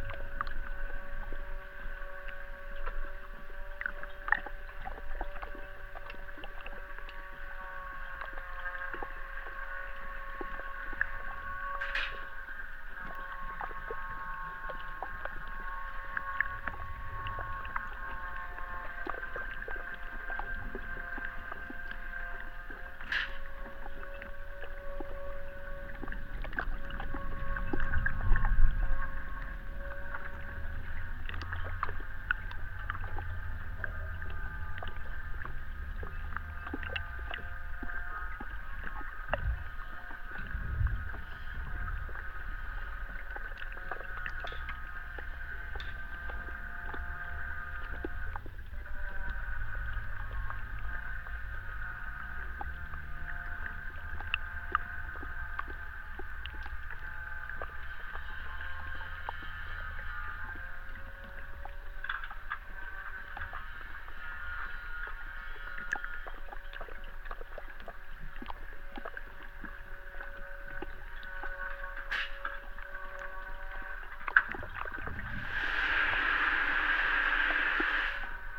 Listening arriving boat through underwater microphone